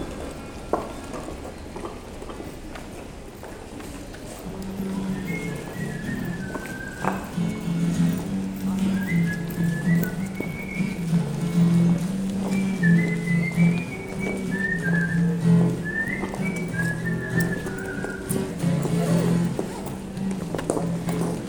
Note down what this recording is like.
Rue Noël Ballay - This artery is one of the main shopping streets of the city. Walkers go quietly, sometimes with rather amused discussions. A tramp and his dog wait in front of an establishment. Everyone knows the guy, this causes him plays nothing and chats a lot. But on the other hand, as soon as he begins to play the guitar, and to... whistle (we will describe the sound like that), we quickly run away !